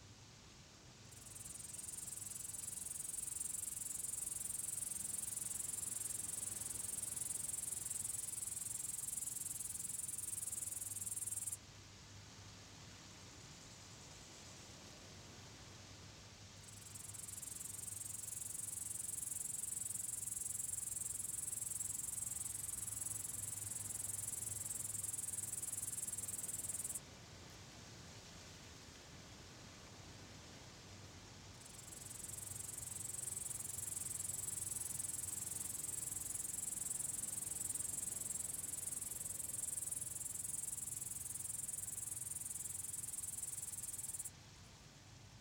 Gaigaliai, Lithuania, abandoned airport
Little abandoned airport. Windy day.